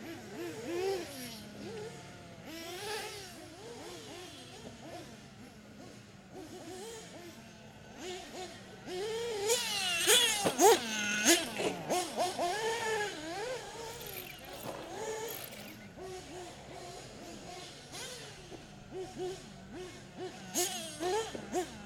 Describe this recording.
Evening mayhem at St. Louis Dirt Burners R/C Raceway. Cars catching air on the jumps. Crashes - one into chain link fence. Shrieks.